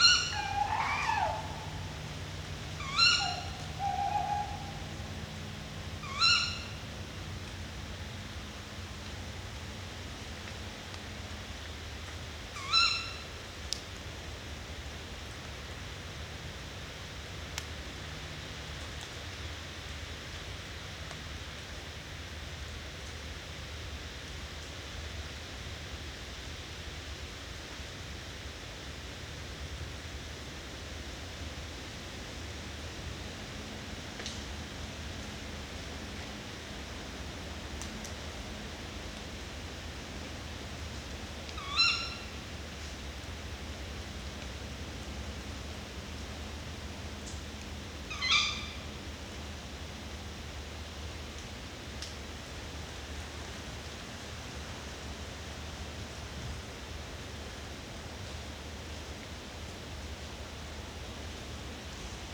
Negast forest, Scheune, Rügen - Owl-couple in the woods
Owl couple meeting in the woods, he lives in a barn, she in the woods, every night they call and find each other
Zoom F4 - diy SASS with 2 PUI5024 omni condenser mics
Vorpommern-Rügen, Mecklenburg-Vorpommern, Deutschland, June 2021